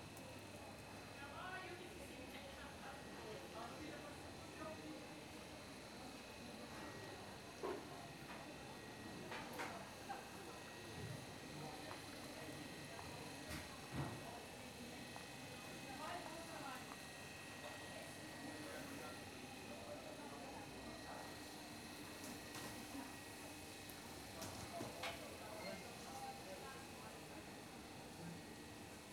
Porto, Mercado do Bolhão - short soundwalk around the stalls
a couple of man talking over coffee and sounds of crates being thrown n a near by corridor, old refrigerating unit churning out on the wall, vendors talking to each other and to customers, at the end walking by a small bistro/cafe, filled with locals, talking about something feverishly.
Porto, Portugal, September 2013